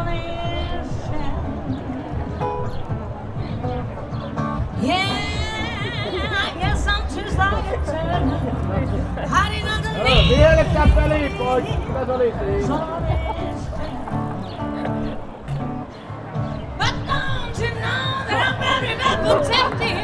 Torinranta, Oulu, Finland - Friday evening at the waterfront, Oulu
A woman plays a guitar and sings on a terrace full of people. People heckling at a drunk person trying to take a piss from the docks in front of hundreds of people, eventually applauding him for the effort. Zoom H5 with default X/Y module.